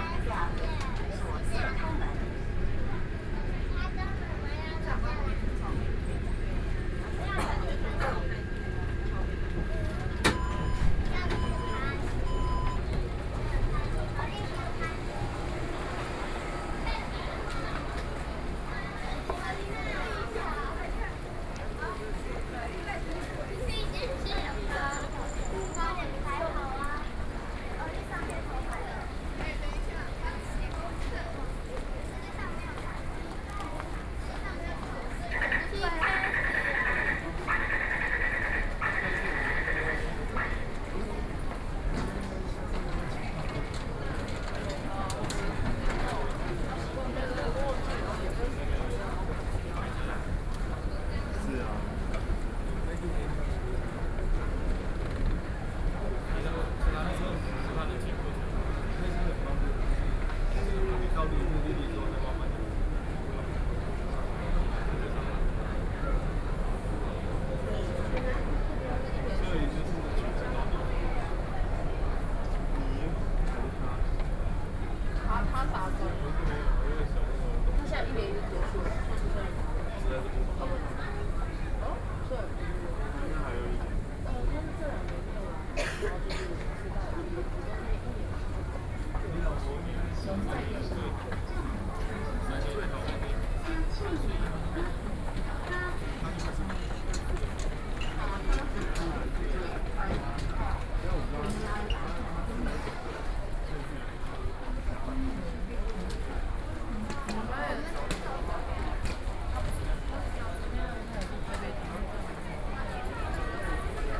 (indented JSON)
{
  "title": "Blue Line (Taipei Metro), Taipei city - soundwalk",
  "date": "2013-07-09 15:45:00",
  "description": "from Taipei Main Station to Zhongxiao Fuxing Station, Sony PCM D50 + Soundman OKM II",
  "latitude": "25.04",
  "longitude": "121.53",
  "altitude": "20",
  "timezone": "Asia/Taipei"
}